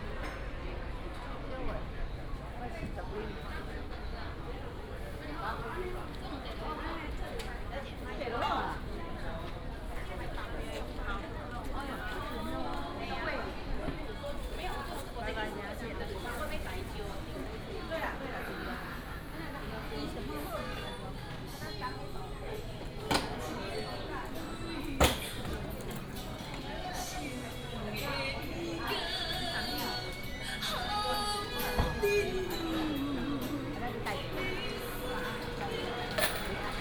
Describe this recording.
Walking through the market, Walking in a small alley, Traditional small market